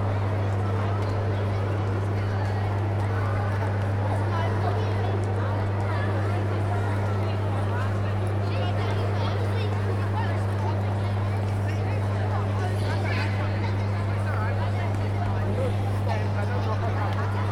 neoscenes: Tate Modern, Turbine Hall ambience - neoscenes: Turbine Hall ambience